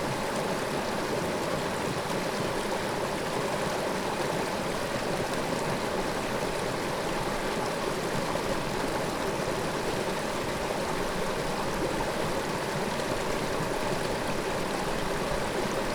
Lithuania, Utena, small waterfall in ice
brook and icy warerfall
24 January 2011, 16:05